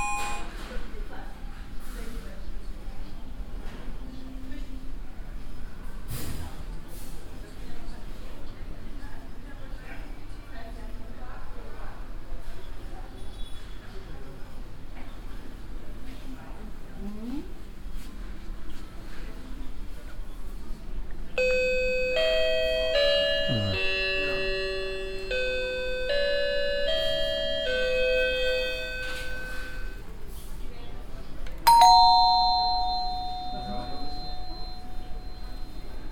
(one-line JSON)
{"title": "bensberg, overather straße, construction market, door bells", "date": "2009-07-06 01:55:00", "description": "soundmap nrw: social ambiences/ listen to the people in & outdoor topographic field recordings", "latitude": "50.96", "longitude": "7.19", "altitude": "190", "timezone": "Europe/Berlin"}